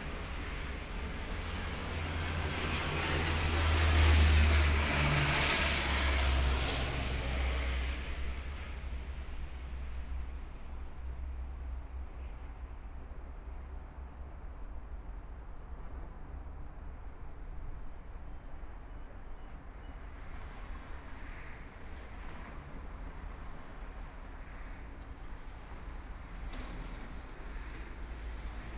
新生公園, Taipei EXPO Park - Sitting in the park
in the Park, Environmental sounds, Birds singing, Traffic Sound, Aircraft flying through, Tourist, Clammy cloudy, Binaural recordings, Zoom H4n+ Soundman OKM II
Taipei City, Taiwan, 10 February 2014